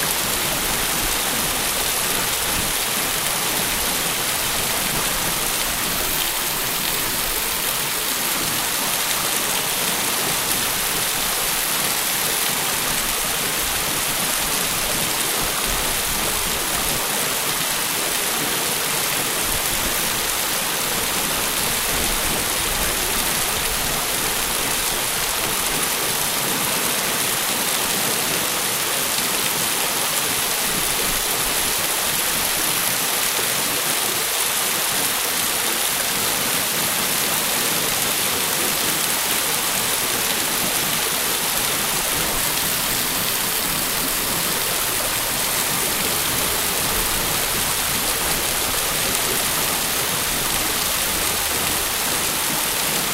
Nowe Warpno, Polska - sound of fountain